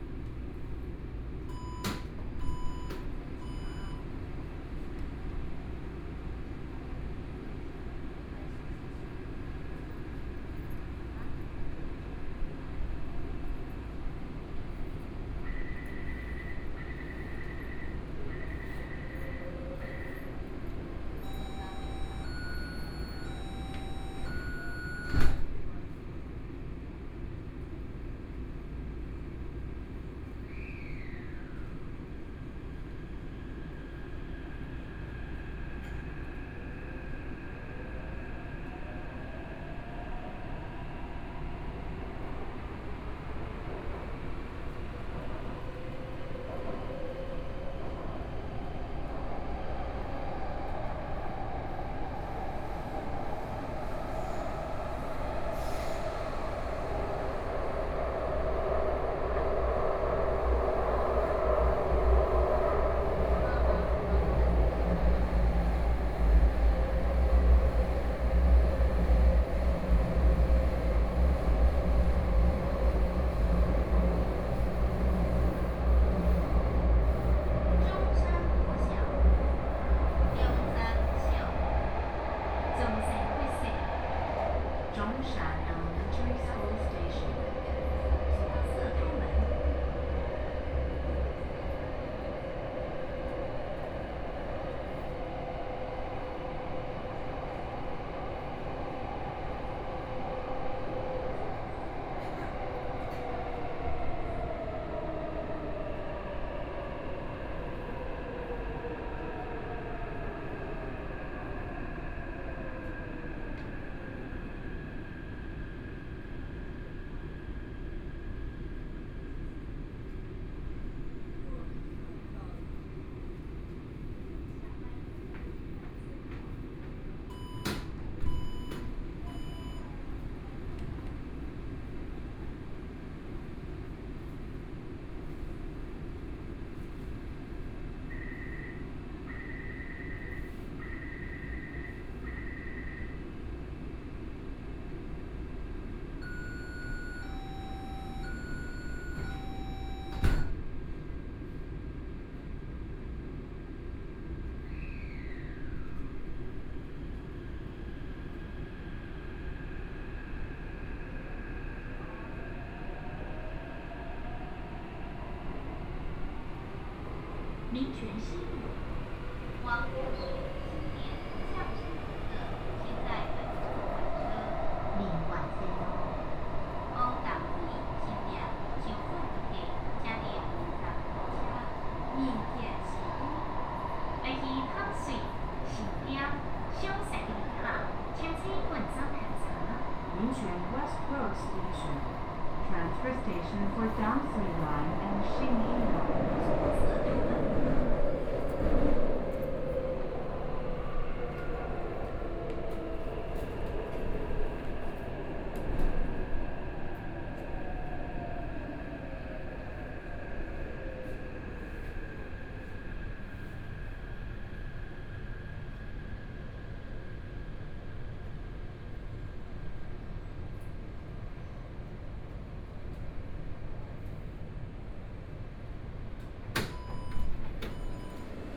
from Songjiang Nanjing Station to Minquan West Road Station, Binaural recordings, Zoom H4n + Soundman OKM II